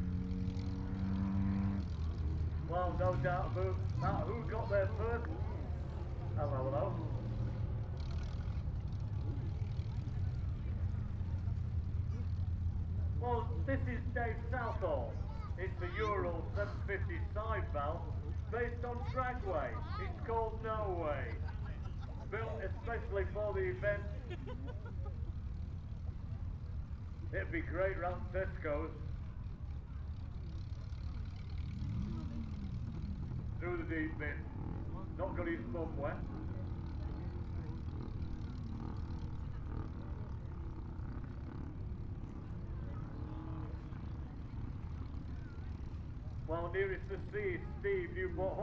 S Cliff, Bridlington, UK - race the waves ...
race the waves ... beach straight line racing ... motorbikes ... cars ... vans ... flat beds ... americana ... xlr sass on tripod to zoom h5 ...